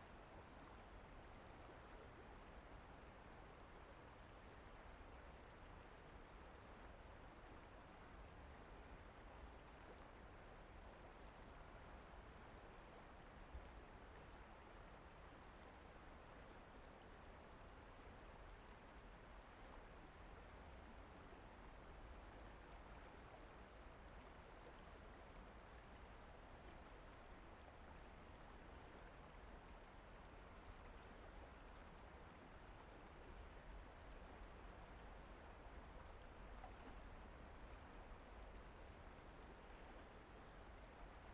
Piazza Castegnate, Castellanza VA, Italy - The Olona river in front of the library in Castellanza (VA)
Recorded with the XY capsule (120°) of my Zoom H6, from the river bank.